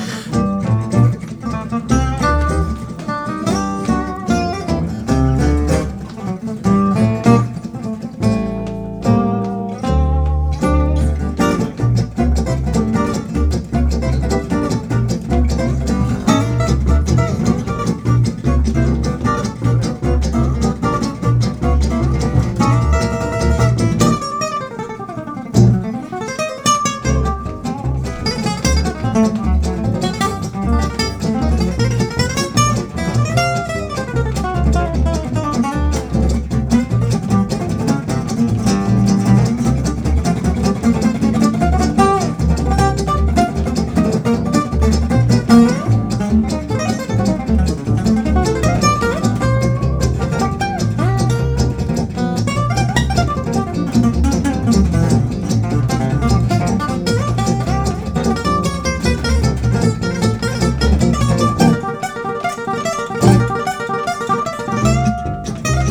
Viertelbar, Köln-Nippes, Joscho Stephan und Band in Concert - Joscho Stephan und Band in Concert
Konzert auf engstem Raum in der "Viertelbar" (nomen est omen): Joscho Stephan und Band. Besetzung: Gitarre, Rhythmusgitarre, Kontrabass. Ist Joscho Stephan der reinkarnierte Django Reinhardt? Das Stück heißt "Stomp". Leider nur das iPhone als Aufnahmegerät dabei gehabt ...
Cologne, Germany